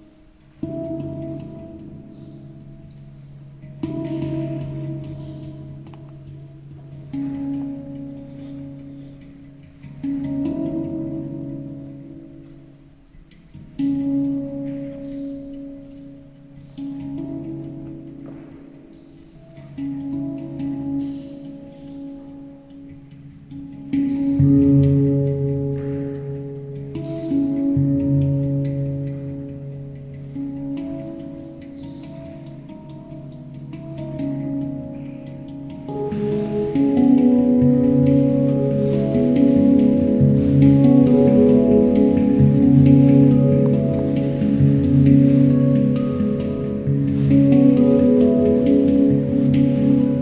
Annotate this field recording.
The cave is rarely available for visitors as rare species of animals are living there. Binaural recording using Soundman OKM II Classic microphones, OKM preamp and Sony DAT recorder.